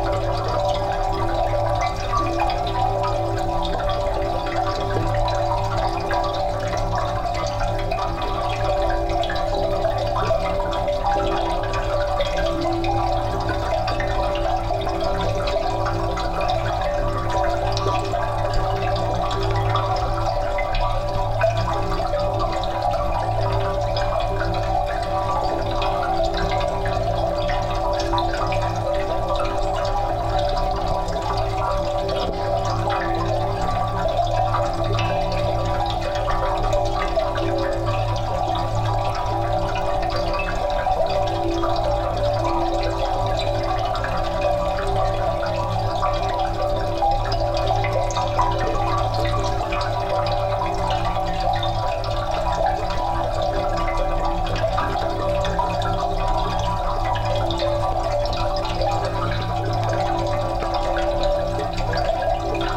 Al Quoz - Dubai - United Arab Emirates - Fountain in the Court Yard (contact Microphone)
Small fountain in the middle of the complex known as the "Court Yard". Recorded using a Zoom H4 and Cold Gold contact microphone. "Tracing The Chora" was a sound walk around the industrial zone of mid-Dubai.